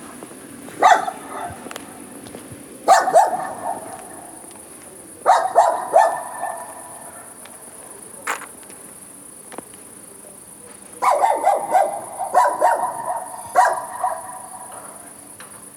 SBG, Camí de les Tres Creus - paseo nocturno, ladridos
Un paseo nocturno por el pueblo. Un pequeño perro nos ladra sin parar desde un balcón. El eco de sus ladridos llega desde las casas al otro lado de la explanada.